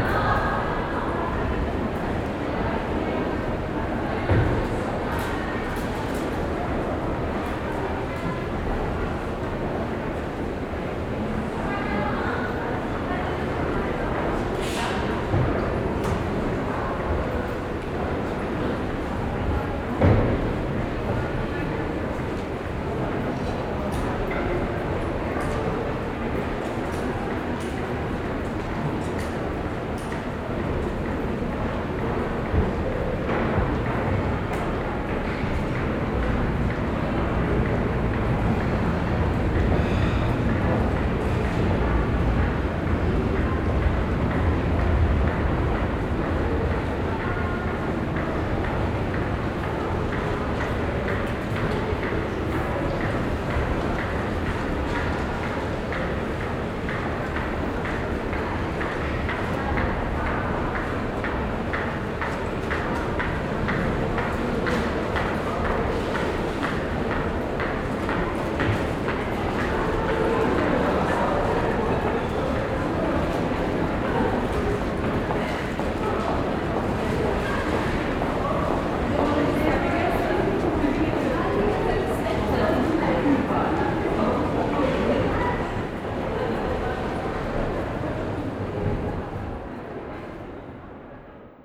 Stadtkern, Essen, Deutschland - essen, main station, pedestrian underpass
In einer Fussgänger Unterführung unterhalb des Hauptbahnhofes. Ein langer Tunnel mit einer LED Lichtwand. Der Klang von Stimmen und Schritten.
Inside a pedestrian underpass. a long tunnel with a LED light wall. The sound of voices and steps.
Projekt - Stadtklang//: Hörorte - topographic field recordings and social ambiences
Essen, Germany, 8 April 2014